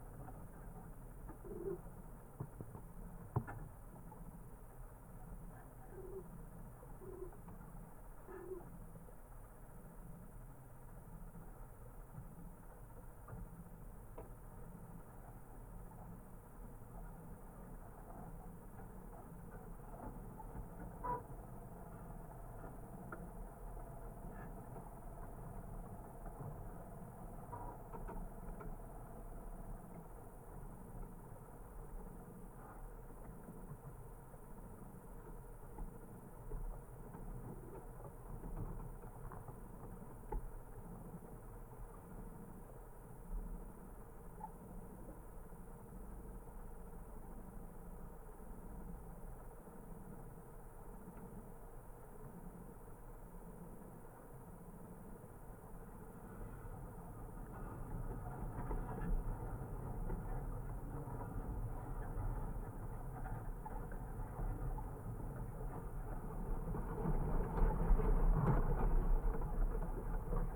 Bonaforth, Grabeland, Deutschland - BonaforthFence160718
2 piezo discs attached on wires of a fence. Blades of grass moved by the wind touching the wires, vibrations and something which sounds like the call of an animal. Recorded on a SoundDevices 702 with the use of HOSA MIT-129 transformers. #WLD2016
Hann. Münden, Germany